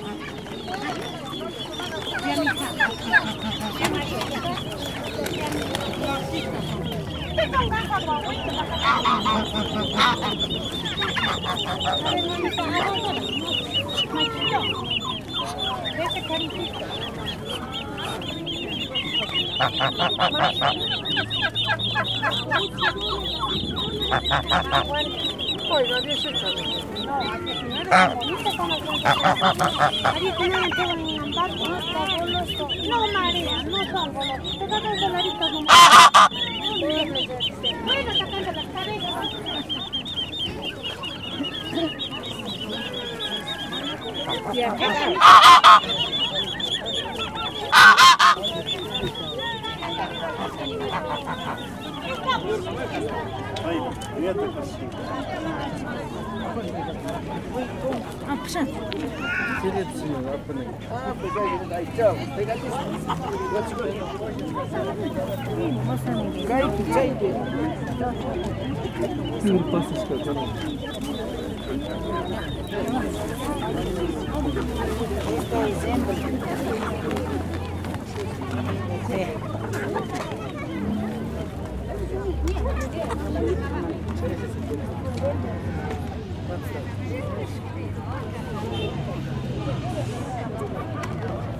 A big market takes place every Thursday in this small Indian town. Next to the cemetery instead selling animals: cows, pigs of the Indies, geese, chickens ...